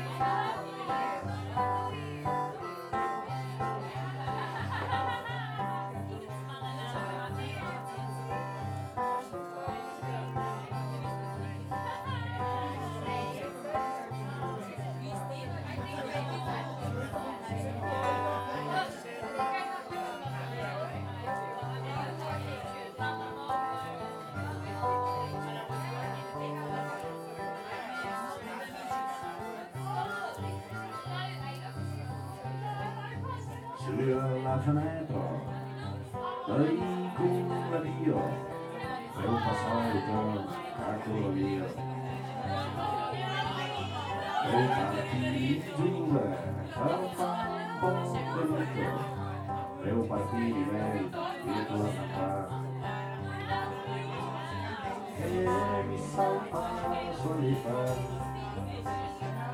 Via Maestra, Rorà TO, Italia - Stone Oven House August 29/30 2020 artistic event 1 of 3
Music and contemporary arts at Stone Oven House, Rorà, Italy; event 1 of 3
One little show. Two big artists: Alessandro Sciaraffa and Daniele Galliano. 29 August.
Set 1 of 3: Saturday, August 30th, h.9:00 p.m.